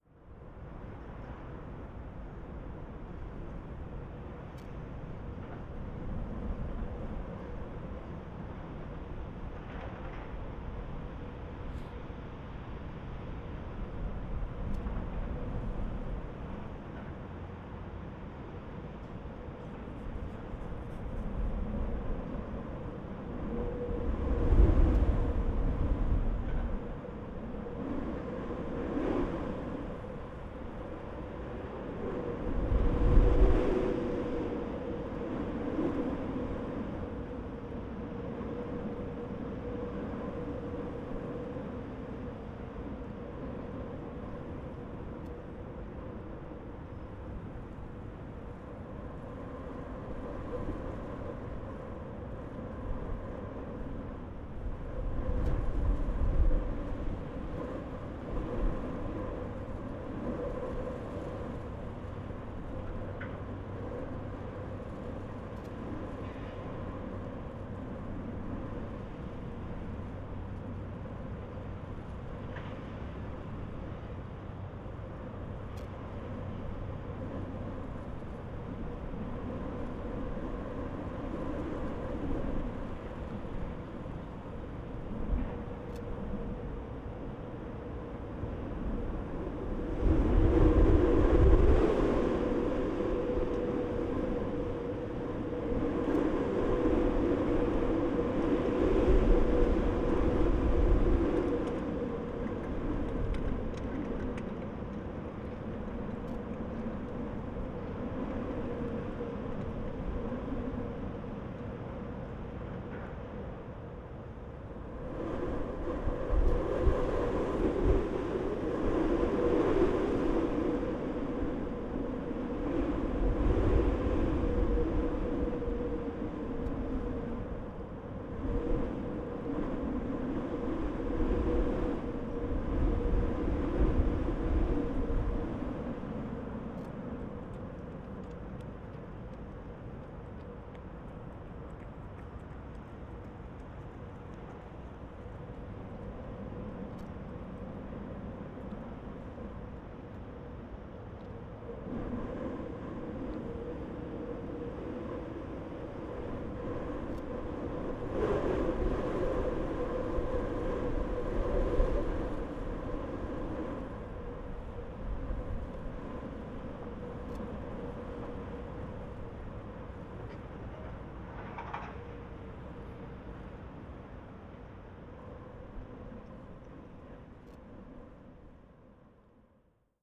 Tempelhof, Berlin - wind in fence
Berlin, Tempelhof airfield, metal fence, wind resonances
(SD702, AudioTechnica BP4025)
Deutschland, European Union, 18 November